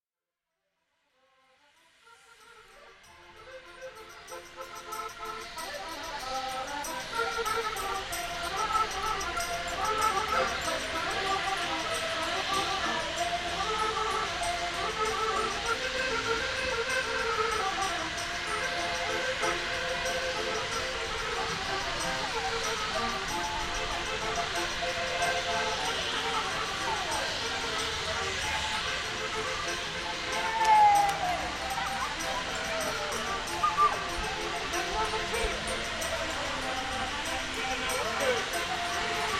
Estacao da Regua, Portugal. Mapa Sonoro do rio Douro. Peso da Reguas railway station. Douro River Sound Map
10 July 2010, 16:45